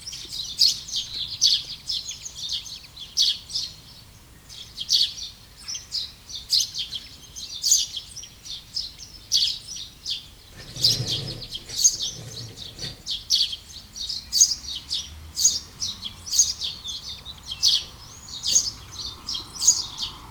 Neufchâteau, Belgique - Sparrows
A small village on the morning. Scoundrels sparrows singing and quietly, people waking up in the neighborhood.
Neufchâteau, Belgium, 9 June 2018, 08:05